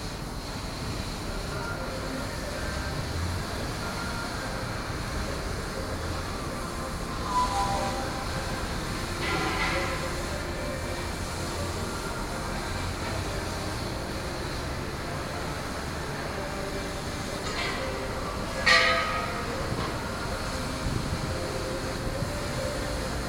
Kniewska TR, Szczecin, Poland
Small factory ambiance.